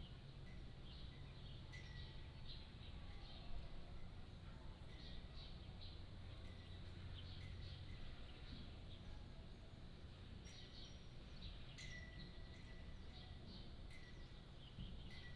馬祖村, Nangan Township - At bus stop
At bus stop, Small village
福建省, Mainland - Taiwan Border, 15 October